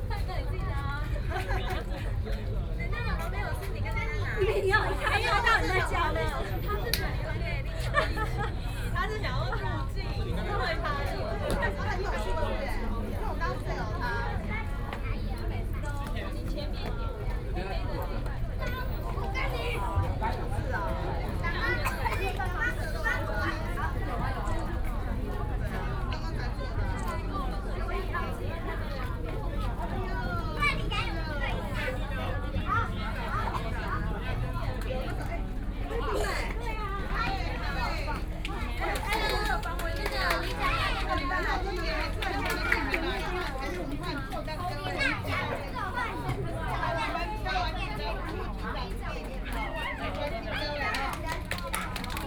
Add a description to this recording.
Community Activities, Many children on the floor painting, Aircraft flying through